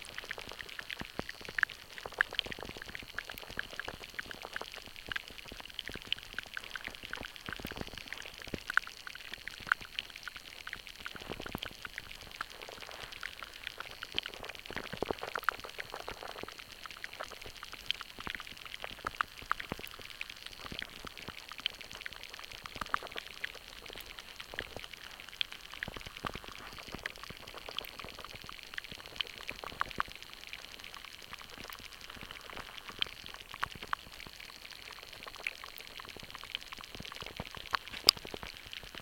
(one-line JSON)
{"title": "underwater life, perruel", "description": "homemade hydrophones in a small shallow stream leading to the andelle river in the village of perruel, haute normandie, france", "latitude": "49.43", "longitude": "1.38", "altitude": "50", "timezone": "Etc/GMT+2"}